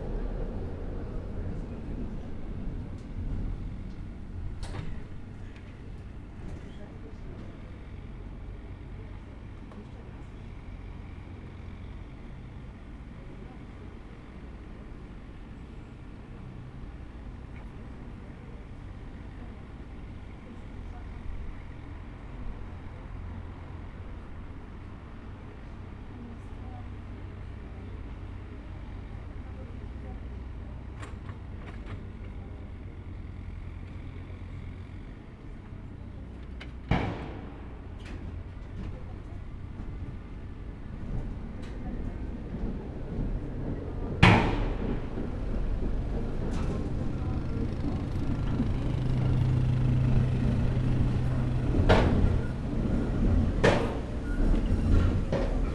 Poznan, Jerzyce district, express tram line - approaching downtown
trams slow down here and take several strong turns, constructions site clanks out of the window.
Poznań, Poland, 18 July 2012, 11:01